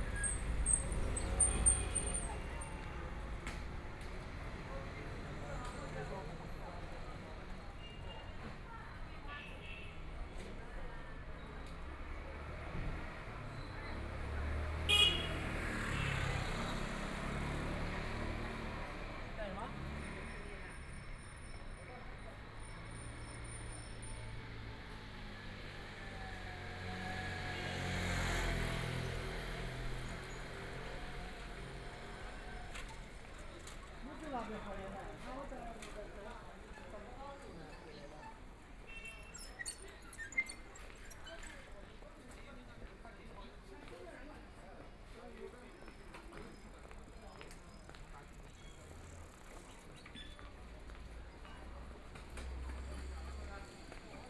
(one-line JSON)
{"title": "Jian road, Shanghai - in the Street", "date": "2013-11-26 17:38:00", "description": "Walking the streets in the small community, Pedestrians, Traffic Sound, Binaural recording, Zoom H6+ Soundman OKM II ( SoundMap20131126- 30)", "latitude": "31.22", "longitude": "121.48", "altitude": "9", "timezone": "Asia/Shanghai"}